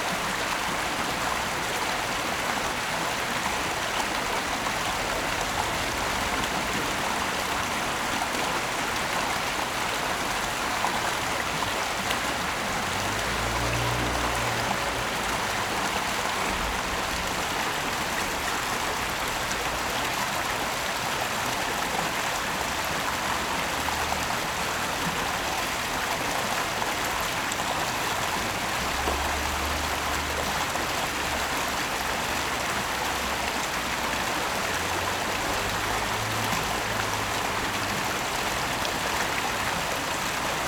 二叭仔溪, Shuangcheng Rd., Xindian Dist. - The sound of water streams
The sound of water streams, Traffic Sound
Zoom H4n+ Rode NT4